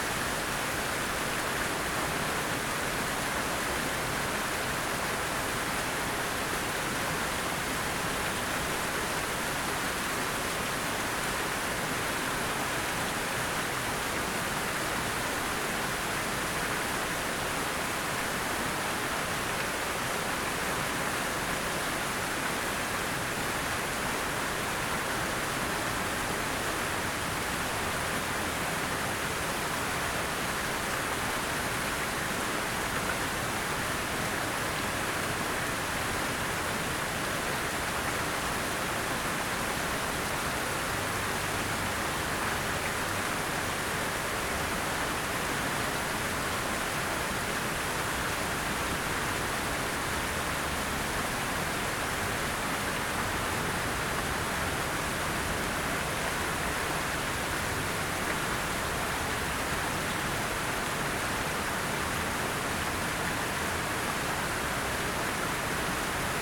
Recorded on Zoom H4N. Listening to the three tears and the droplets on the Northeast side of the fountain's center.